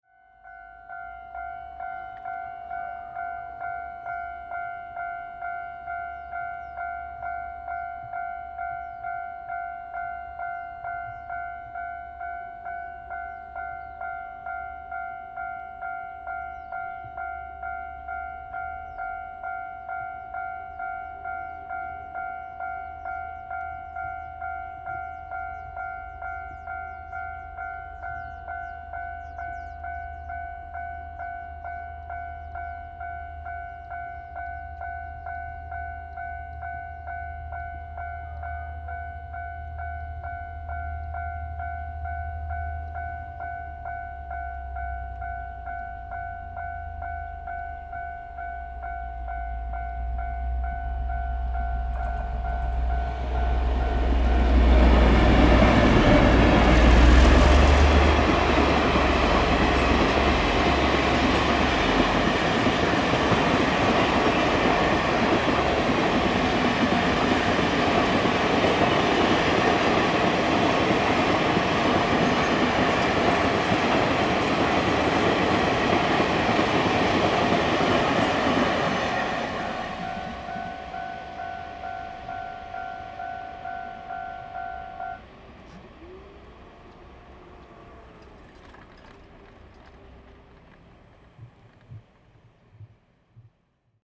秀林鄉景美村, Hualien County - Train traveling through
At level crossing, Birds, Train traveling through, The weather is very hot
Zoom H2n MS+XY
Hualien County, Taiwan, 27 August 2014